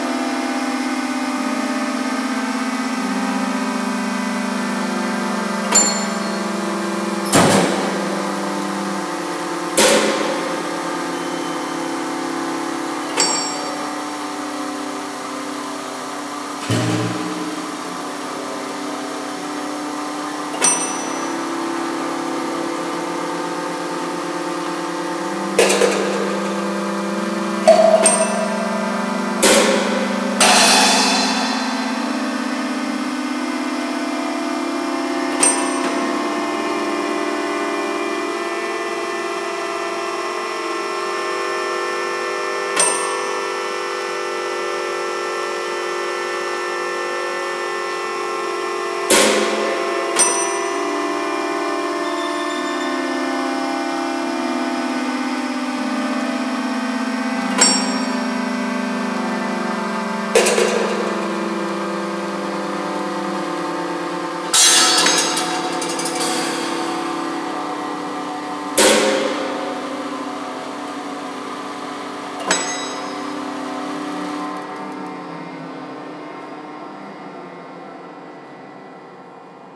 Museumsplatz, Wien, Austria - Jean Tinguelys Méta-Harmonie Sculpture at MUMOK [Recorded Nov, 2013]
Audio recording of Jean Tinguely's sound sculptrure Méta-Harmonie (Build 1978) - located in the usbelevel of the MUMOK museum in Vienna, AU.
Méta-Harmonie is a three-part machine-sculpture build of various found objects, 3 electric motors, 236.22 inch x 114.17 inch x 59.06 inch.
Recorded using Zoom H2n handheld recorder, placed in the middle of the sculpture. Recorded in surround mode and later matched to stereo recording.
19 November 2013, ~16:00